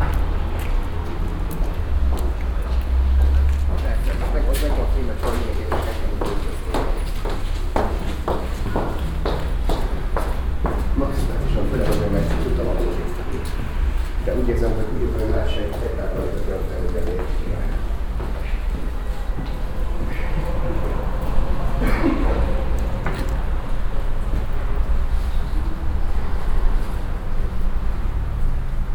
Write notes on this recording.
steps and voices in a narrow old house passage, international city scapes and social ambiences